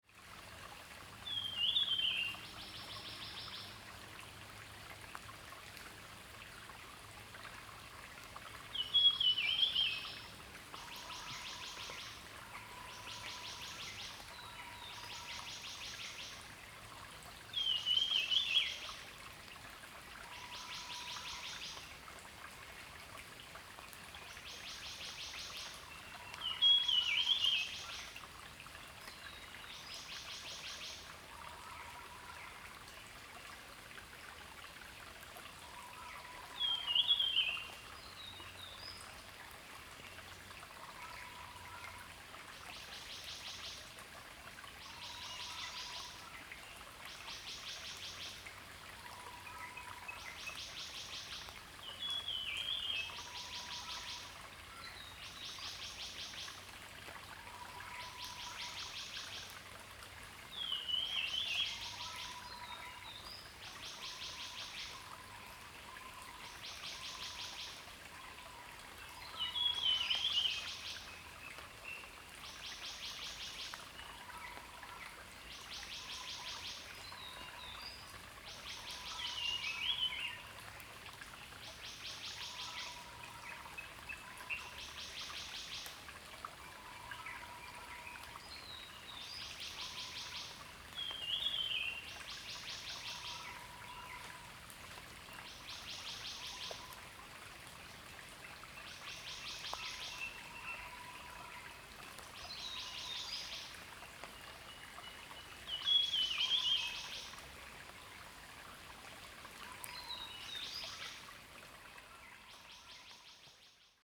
種瓜路, 桃米里 - Stream and Birds
Birds called, stream
Zoom H2n MS+XY
Nantou County, Taiwan